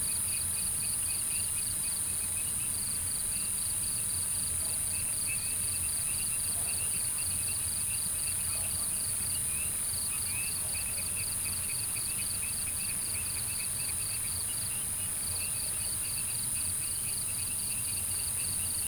茅埔坑, Taomi Ln., Puli Township - Insects called
Frogs chirping, Small road at night, Insects called